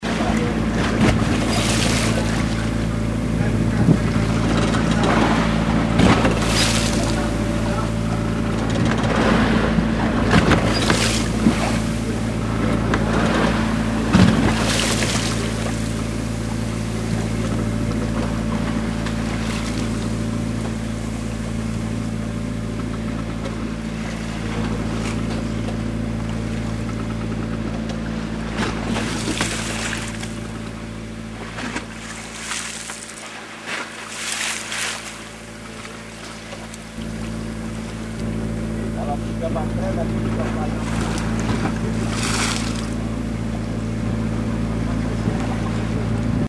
Rifles. Mentawai islands (West Sumatra. Indonesia)
The sea hitting the boat.
we must wait ... no waves